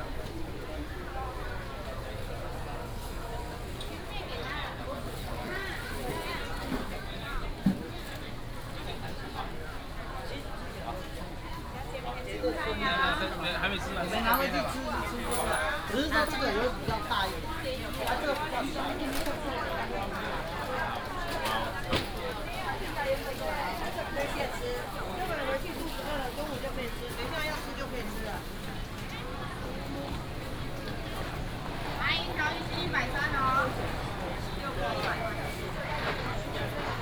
Ren’ai Rd., Zhudong Township, Hsinchu County - Traditional market
Traditional market, Morning in the area of the market, Binaural recordings, Sony PCM D100+ Soundman OKM II
Zhudong Township, Hsinchu County, Taiwan, 12 September 2017